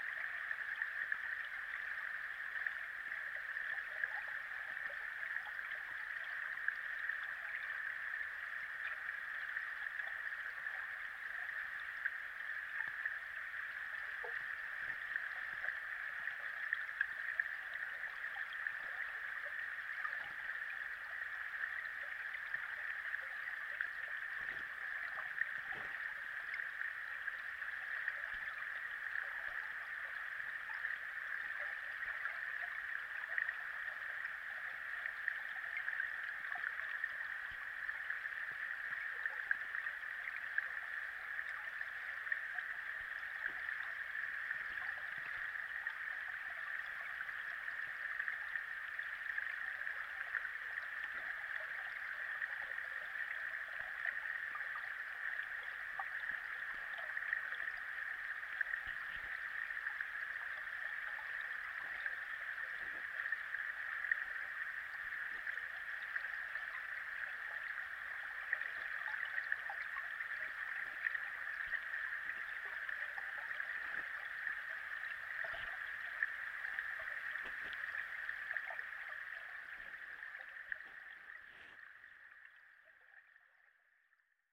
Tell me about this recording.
Middle Mill Wier, an old water will that now functions as a waterfall. Hydrophone recordings, the weather was mild but there had been rainfall and the river level was slightly higher/more murkier than usual. Recording around 5:00pm.